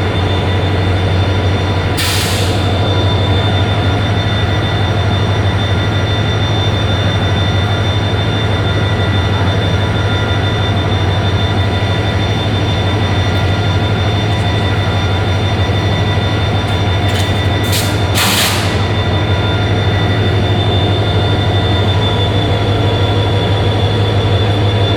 Tunis Centre, Tunis, Tunesien - tunis, main station, two trains
Standing between two train tracks at the main station. A long recording of two old trains standing at the tracks of the terminus with running engines making funny air release sounds. A third train arrives slowly driving backwards. A train service engineer positions some metal poles at the train track.
international city scapes - social ambiences and topographic field recordings
5 May 2012, 19:30